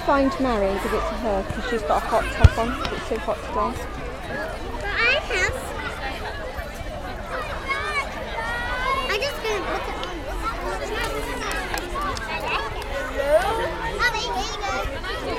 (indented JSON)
{
  "title": "Bristol, City of Bristol, UK - Last Day Of School Term.",
  "date": "2014-07-18 04:00:00",
  "description": "Children in park after the last day of the summer term. Recorded on Marantz 660 with two Rode condeser mics.",
  "latitude": "51.47",
  "longitude": "-2.60",
  "altitude": "51",
  "timezone": "Europe/London"
}